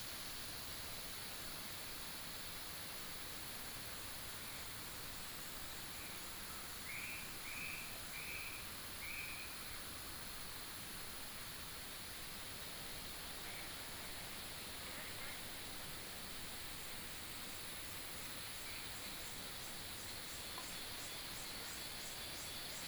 Bird sounds, Cicadas cry, The sound of the river, Frog sounds
7 June 2016, 11:49